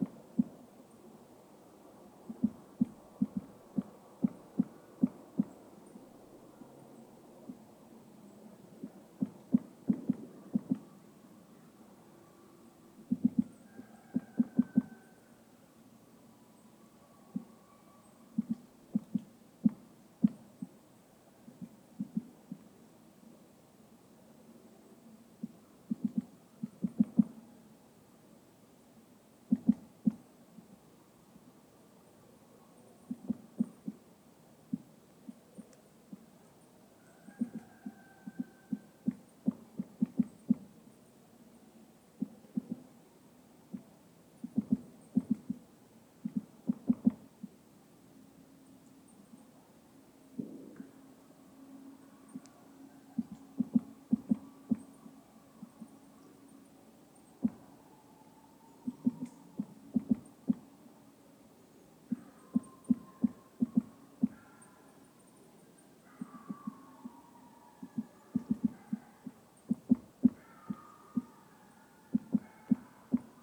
Lithuania, Utena, woodpecker in soundscape
woodpecker in village soundscape